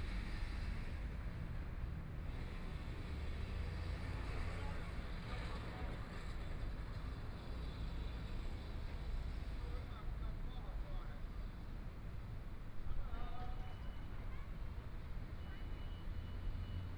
Tiehua Rd., Taitung City - Traffic Sound
Traffic Sound, in the Abandoned train station, Binaural recordings, Zoom H4n+ Soundman OKM II